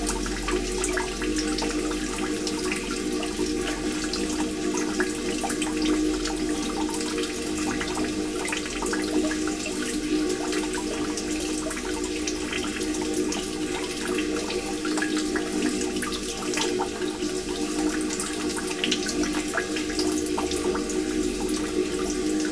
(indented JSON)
{
  "title": "Utena, Lithuania, inside rain well",
  "date": "2020-11-24 17:40:00",
  "description": "Rain/sewerage well in car parking. small microphones inside the well.",
  "latitude": "55.50",
  "longitude": "25.59",
  "altitude": "106",
  "timezone": "Europe/Vilnius"
}